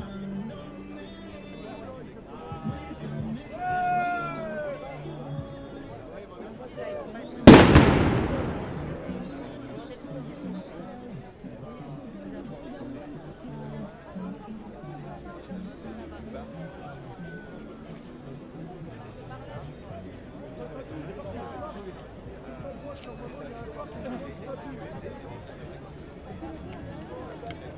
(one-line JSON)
{"title": "Cr Victor Hugo, Saint-Étienne, France - St-Etienne (42000)", "date": "2018-12-08 14:00:00", "description": "St-Etienne (42000)\nManifestation des \"Gilets Jaunes\"", "latitude": "45.43", "longitude": "4.39", "altitude": "536", "timezone": "Europe/Paris"}